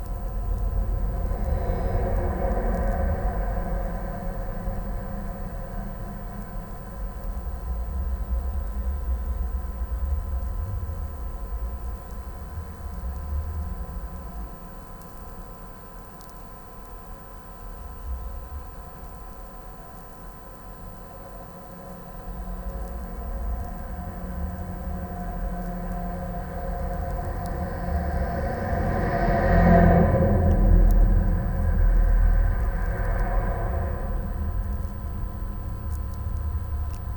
study of abandoned railway bridge over the highway. contact microphones on the rails and electromagnetic antenna Priezor for the electro field